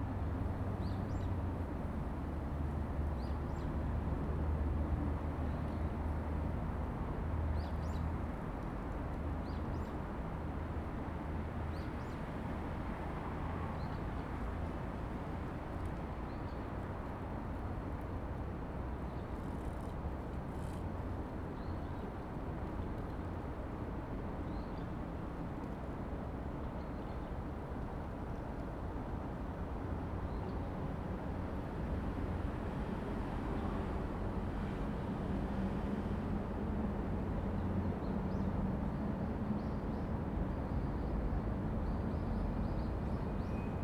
Nae-dong, Gimhae-si, Gyeongsangnam-do, 韓国 - in the Park
in the Park, Traffic Sound, Birds singing
Zoom H2n MS+XY
Gimhae, Gyeongsangnam-do, South Korea, 17 December